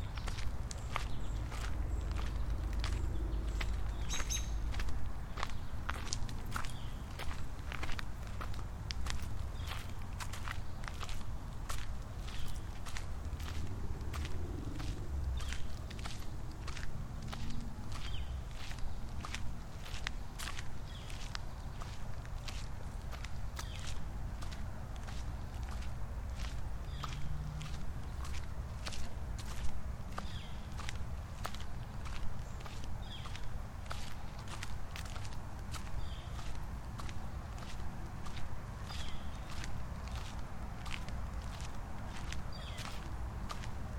WLD, World Listening Day, Recorded while walking through East Rock Park in New Haven, CT. Starts at my apartment and goes through the park and back.
Hamden, CT, USA, 18 July 2010